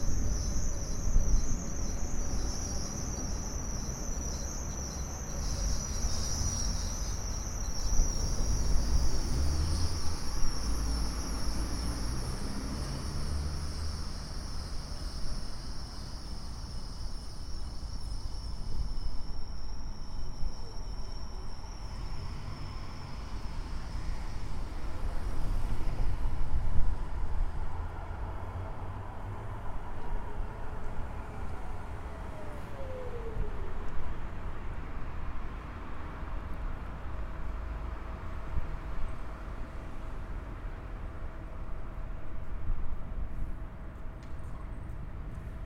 Rivierenbuurt-Zuid, The Hague, The Netherlands - trams
recording tram sounds